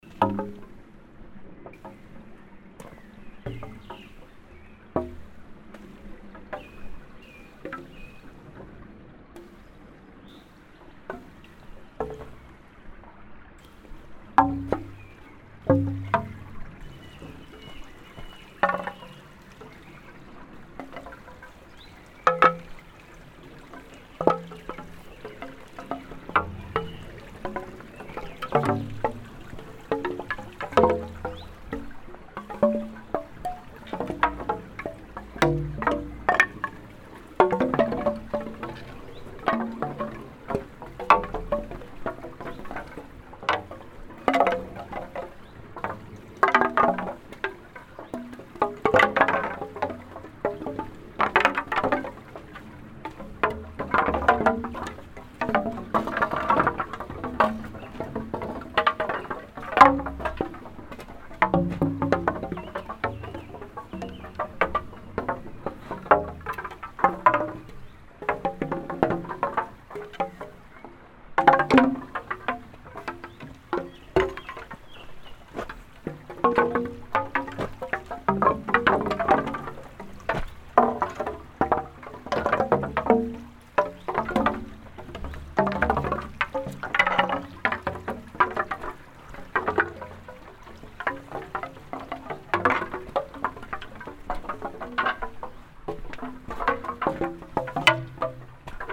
At the Hoscheid Klangwanderweg - sentier sonore. A Sound object by Alan Johnston entitled Choeur de la foret. The object looks a little like a wooden shrine. A very basic construction seated close to the small stream that crosses the valley. At the ceiling of the construction there are several strings attached which hold wooden paddels. Those peddals start to swing in the wind or as you move them by walking thru and as the clash together they make these sounds.
more informations about the Hoscheid Klangwanderweg can be found here:
Projekt - Klangraum Our - topographic field recordings, sound art objects and social ambiences